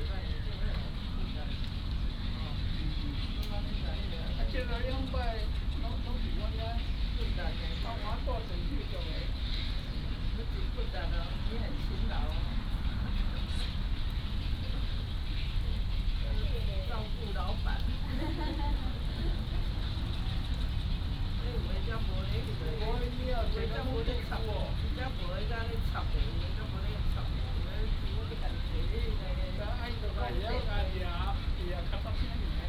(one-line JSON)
{"title": "大安森林公園, Da'an District - in the Park", "date": "2015-06-04 17:06:00", "description": "in the Park, Bird calls", "latitude": "25.03", "longitude": "121.53", "altitude": "15", "timezone": "Asia/Taipei"}